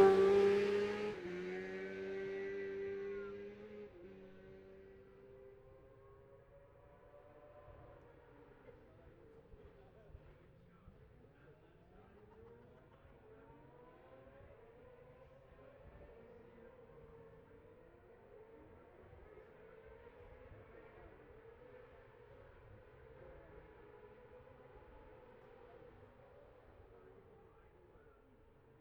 Jacksons Ln, Scarborough, UK - olivers mount road racing 2021 ...
bob smith spring cup ... F2 sidecars qualifying ... luhd pm-01 mics to zoom h5 ...
22 May 2021, ~13:00